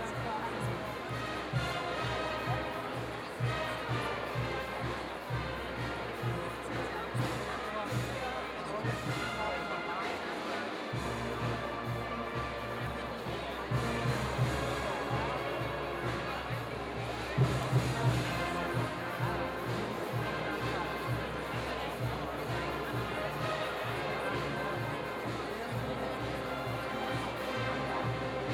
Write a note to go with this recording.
This is the first part of the Maienzug, people are clapping to the march, shouting at each other or just say hello, the brass bands are playing, the military history of the Maienzug is quite audible, there are also Burschenschaften singing their strange songs while stamping with their feet.